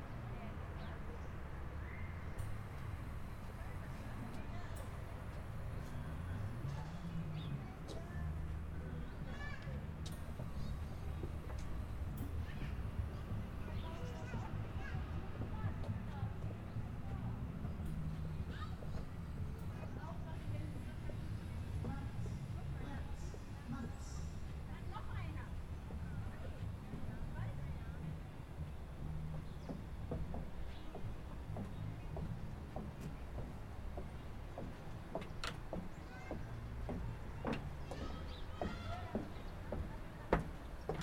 Jetzt Kunst No3, Männerbad
Jetzt Kunst No.3, Kunsttriennale für Kunstprojekte. Nachsaison im berühmten Marzilibad. Projekt maboart; abtröchne oder hier trocken, Wort- und Klangcollage